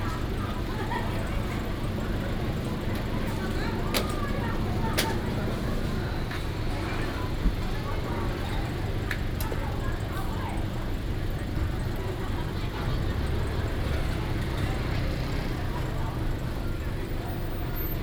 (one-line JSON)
{"title": "潭秀好康黃昏市場, Tanzi Dist., Taichung City - Walking in the dusk market", "date": "2017-10-09 18:04:00", "description": "Walking in the dusk market, vendors peddling, Traffic sound, Binaural recordings, Sony PCM D100+ Soundman OKM II", "latitude": "24.22", "longitude": "120.70", "altitude": "176", "timezone": "Asia/Taipei"}